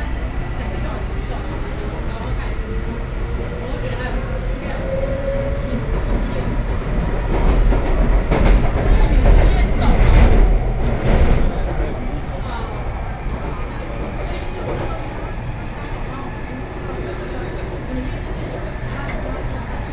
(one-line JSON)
{"title": "Taipei, Taiwan - in the MRT train", "date": "2012-11-03 10:32:00", "latitude": "25.12", "longitude": "121.51", "altitude": "16", "timezone": "Asia/Taipei"}